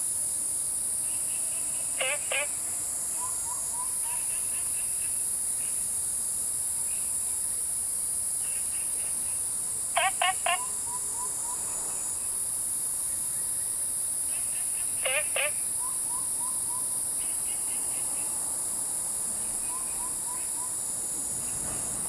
青蛙ㄚ 婆的家, Taomi Ln., Puli Township - In the morning
Insects sounds, Frogs chirping, Bird calls, Chicken sounds, Cicadas called
Zoom H2n MS + XY